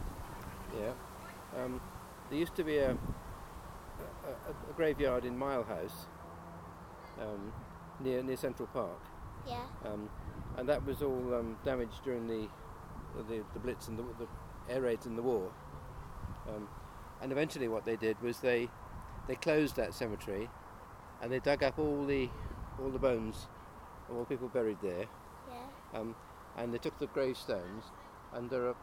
Efford Walk Two: History of Elephants Graveyard - History of Elephants Graveyard